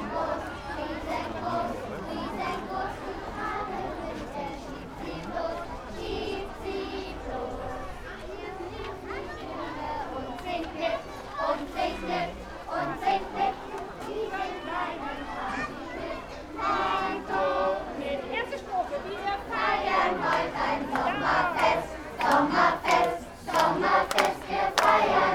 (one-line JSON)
{"title": "Wallschule, Peterstraße, Oldenburg, Deutschland - Sommerfest, little kids choir", "date": "2018-05-25 15:38:00", "description": "Sommerfest at Wallschule, on a nice and hot spring day, kids choir performing\n(Sony PCM D50)", "latitude": "53.14", "longitude": "8.21", "altitude": "5", "timezone": "Europe/Berlin"}